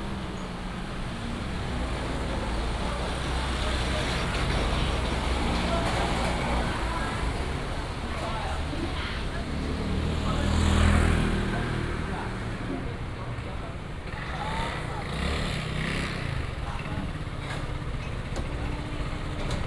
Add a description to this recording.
Walking through the Food Shop Street, traffic sound